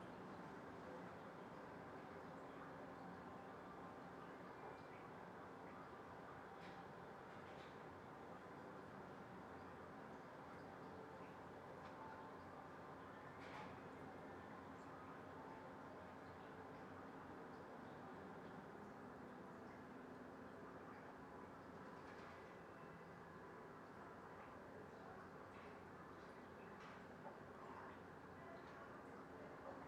Cannaregio, Venice, Włochy - From window House del Pozzo hostel
Soundscape from hostel window. Lazy sunday, ringing bells, talking, succussion of water from channel and other sound.
Venezia, Italy, 11 December 2016, ~12:00